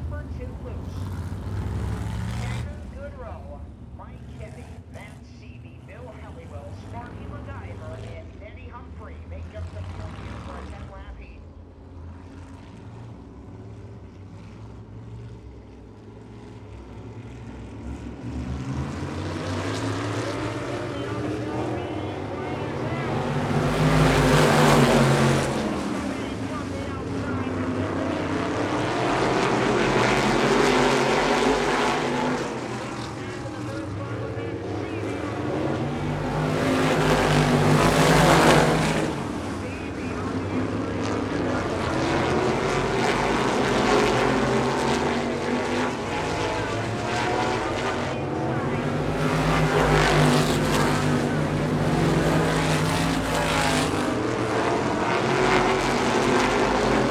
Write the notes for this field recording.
Heat Races for the SMAC 350 Supermodifieds